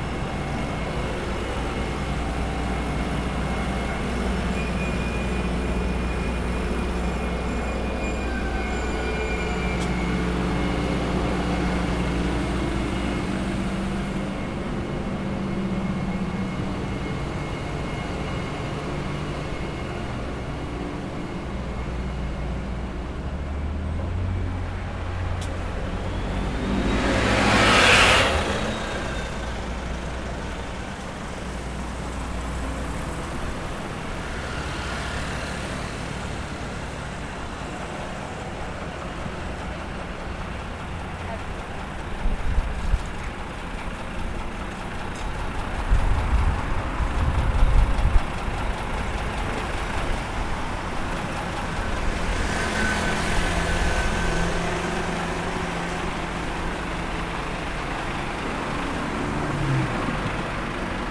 Norman Road, Deptford, London - Approaching Deptford Creek
Sounds collected as part of an MA research project exploring phenomenological approaches to thinking about the aesthetics and stewardship of public space. A sound gathered at the turning point leading walkers towards Deptford Creek - a narrow, sheltered waterway; an inlet and offshoot of London's snaking River Thames -, one of the most biodiverse landscapes for its size in London, and one of the rare 2% of Tidal Thames’ river edges to remain natural and undeveloped. The Norman Road entrance to this urban eco-site is elusive, veiled by various luxury flat complexes the walker becomes an intruder, as the the line between public and pseudo-public space becomes increasingly blurred. To reach the turning, the walker must first venture through the cacophony of commuter traffic combined with a tireless flow of construction vehicles, symptomatic of the untiring development projects absorbing public spaces in the Creekside area.